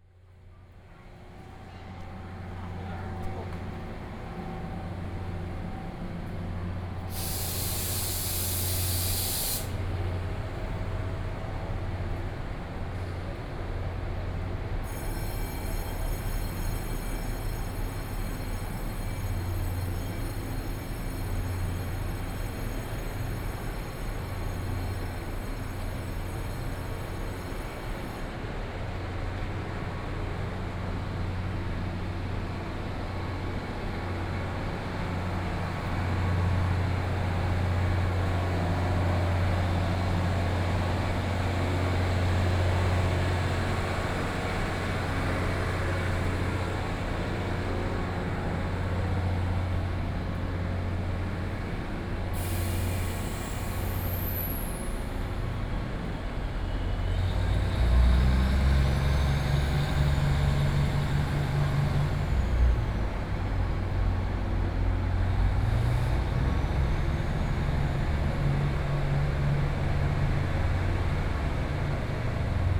Yilan Station, Yilan County - walking in the Station
Walked through the underpass from the station platform to station exit, Binaural recordings, Zoom H4n+ Soundman OKM II